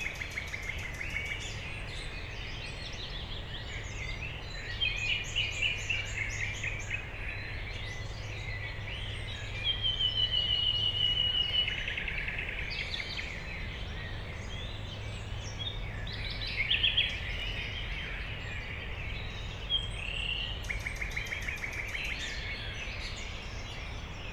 Berlin, Friedhof Columbiadamm - dawn chorus
Berlin, cemetery Friedhof Columbiadamm, before sunrise, dawn chorus day, nighingale and other birds
singing
(SD702, Audio Technica BP4025)
Berlin, Deutschland, 3 May